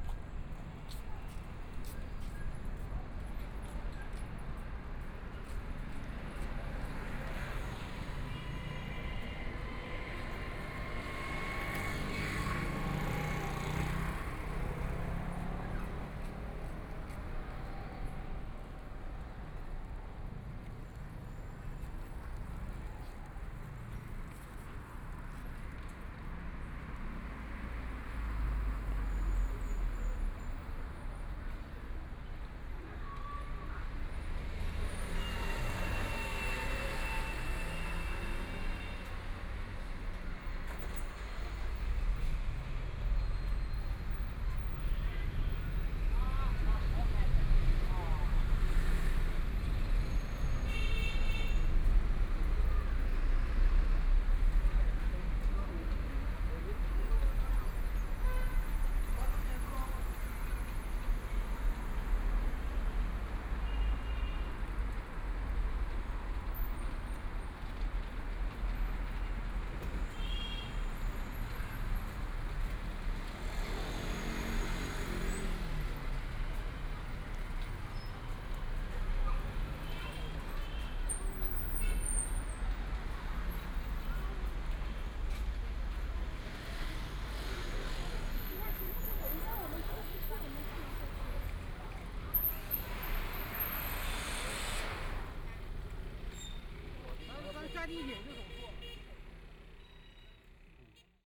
Fuxing Road, Shanghai - At intersection
Walking on the road, Pedestrians, Traffic Sound, Binaural recording, Zoom H6+ Soundman OKM II
26 November 2013, ~18:00